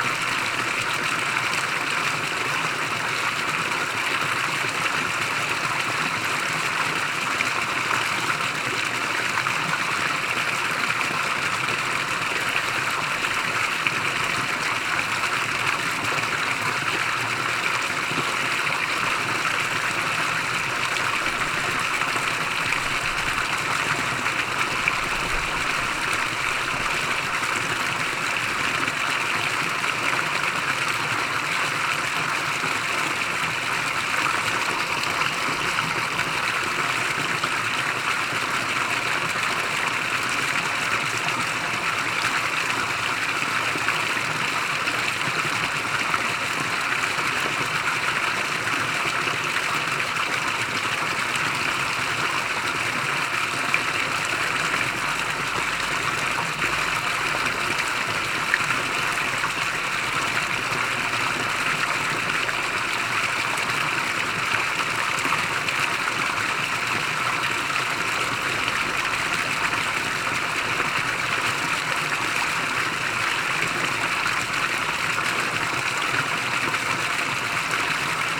Fontaine Place Dugas à Thurins